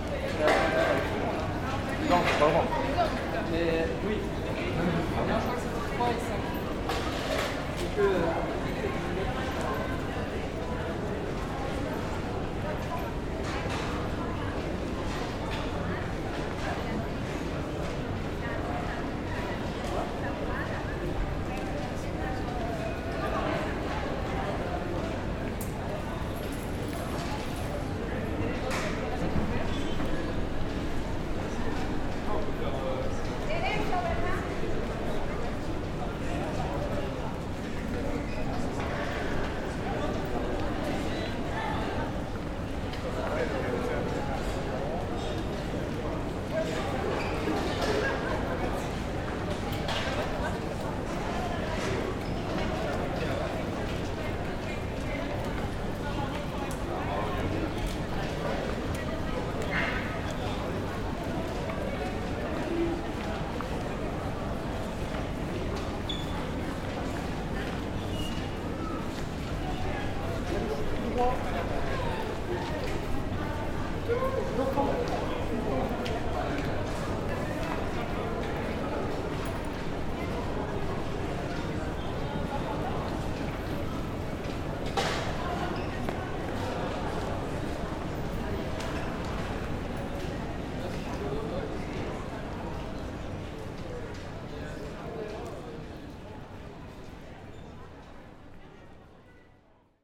Gal Bordelaise, Bordeaux, France - Gal Bordelaise
Gal Bordelaise ambiance, atmosphere, street
Captation ZOOMH6